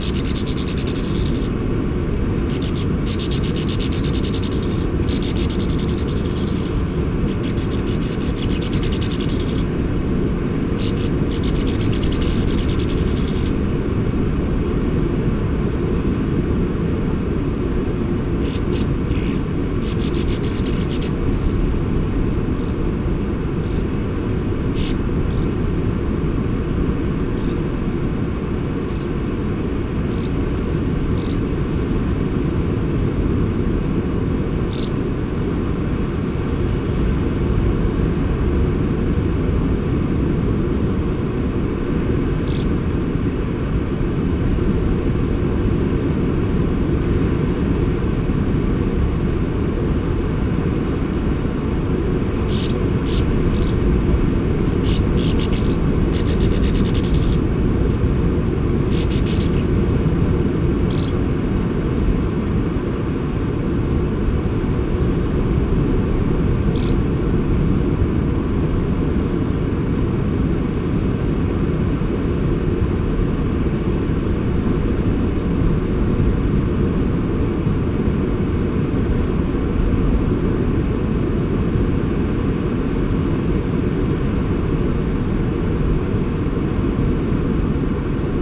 {"title": "North Side, Staithes, Saltburn-by-the-Sea, UK - sand martins under the cliff ...", "date": "2007-07-16 09:40:00", "description": "sand martins under the cliff ... colony ... one point stereo mic to minidisk ... background noise of waves ... dogs ... voices ...", "latitude": "54.56", "longitude": "-0.79", "timezone": "Europe/London"}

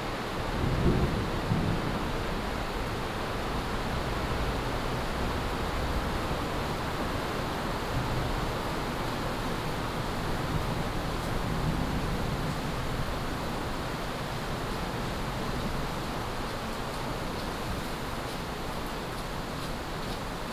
{"title": "基隆市仁愛區, Taiwan - walking in the station", "date": "2016-07-18 13:40:00", "description": "walking in the station", "latitude": "25.13", "longitude": "121.74", "altitude": "11", "timezone": "Asia/Taipei"}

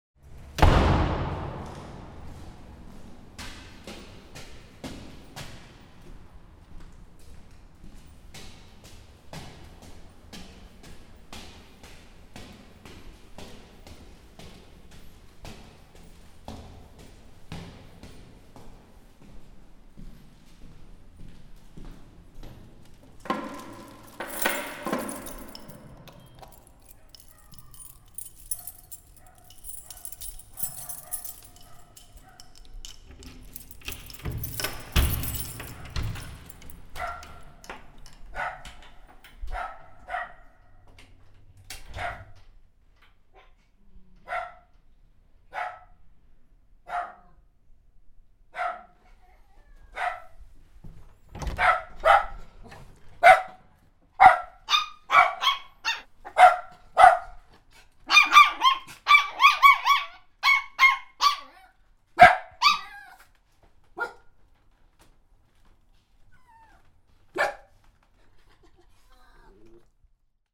{"title": "Maribor, Slovenia - Staircase walk dogs greeting", "date": "2008-05-04 17:20:00", "description": "A short staircase walk with nice morphing of acoustics when entering apartment, doors slam, keys jingling, two dogs greeting with barking. Recorded with Zoom H4", "latitude": "46.56", "longitude": "15.65", "altitude": "268", "timezone": "Europe/Ljubljana"}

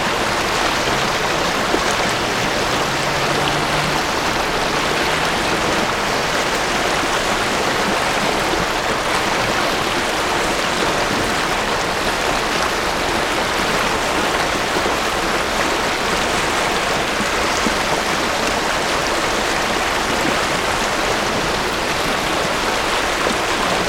{"title": "Die, La drome", "date": "2008-08-24 13:17:00", "description": "France, Drome, river", "latitude": "44.75", "longitude": "5.37", "timezone": "Europe/Paris"}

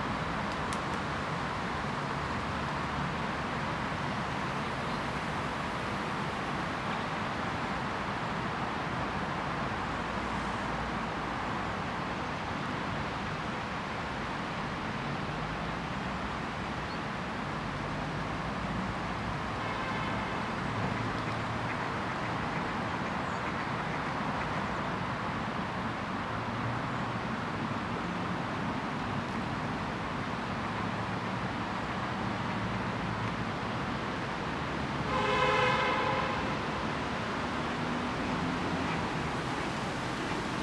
{"title": "Parc Louise-Marie, Namur, Belgique - Windy day park ambience near the pond", "date": "2021-12-28 10:40:00", "description": "Ducks, seagulls, sirens.\nTech Note : Sony PCM-D100 internal microphones, wide position.", "latitude": "50.47", "longitude": "4.86", "altitude": "87", "timezone": "Europe/Brussels"}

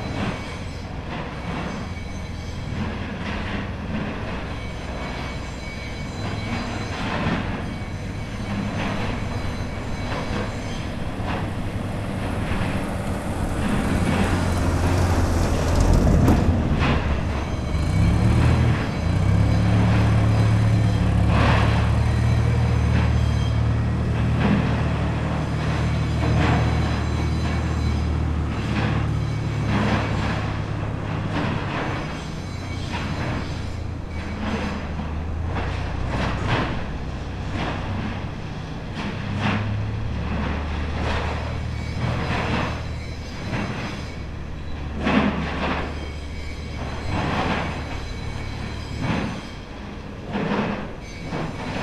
{"title": "Lithuania, Utena, industrial", "date": "2011-01-20 11:33:00", "description": "at the entrance of metal product factory", "latitude": "55.51", "longitude": "25.63", "timezone": "Europe/Vilnius"}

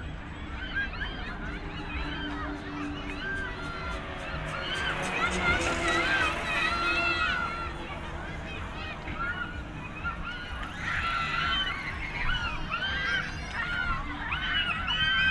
{"title": "Tempelhofer Feld, Berlin, Deutschland - sounds from the field, heard in an entrance", "date": "2013-07-06 21:05:00", "description": "at the buildings near Oderstraße, sounds from passers-by an the distant crowded field, heard in a small entrance\n(SD702, Audio Technica BP4025)", "latitude": "52.47", "longitude": "13.42", "altitude": "51", "timezone": "Europe/Berlin"}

